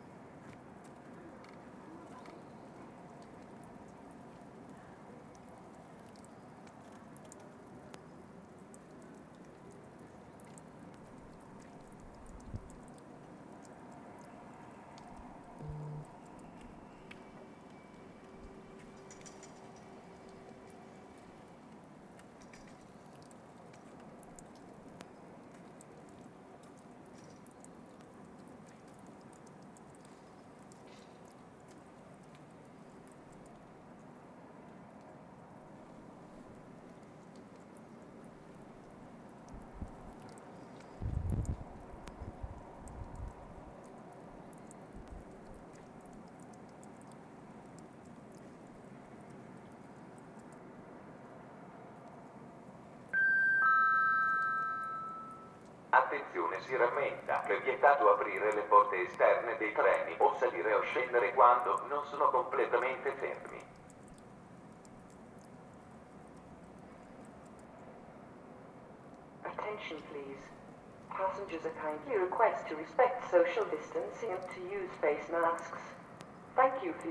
Via Gioacchino Rossini, Cantù Asnago CO, Italy - Train station with train announcement.
High speed train incoming, then an announcement on the loudspeaker, sounds of road works, an airplane and a second train.
Recorded on a Zoom N5. Low-pass filter.
ig@abandonedsounds